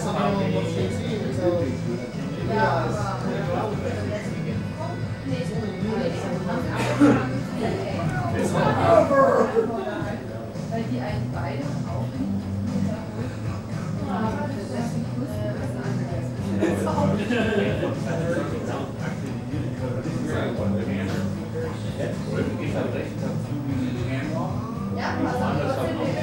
Downtown, Detroit, MI, USA - jacoby's german biergarten
jacoby's german biergarten, 624 brush st, detroit, mi 48226